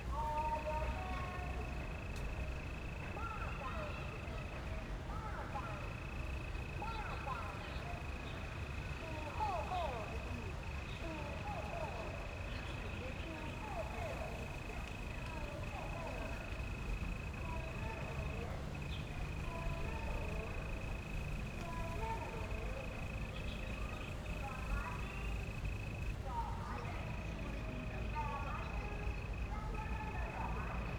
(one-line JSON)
{"title": "Nangang Park, Taipei - Nangang Park", "date": "2012-03-06 14:20:00", "description": "The park's natural sound, Distant sound truck broadcasting, Aircraft flying through, Rode NT4+Zoom H4n", "latitude": "25.04", "longitude": "121.59", "altitude": "19", "timezone": "Asia/Taipei"}